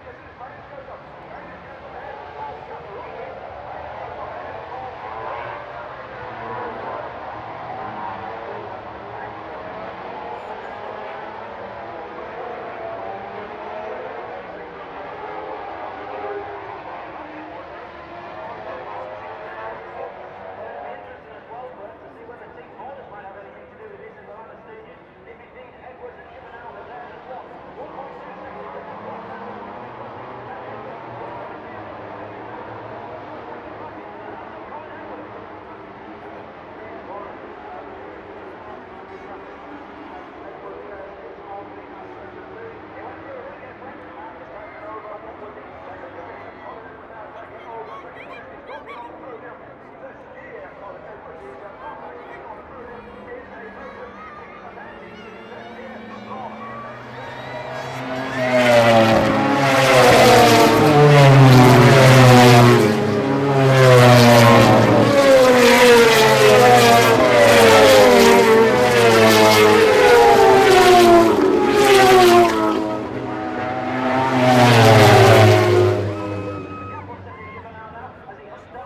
British Motorcycle Grand Prix 2004 ... Race ... stereo one point mic to mini-disk ... commentary ...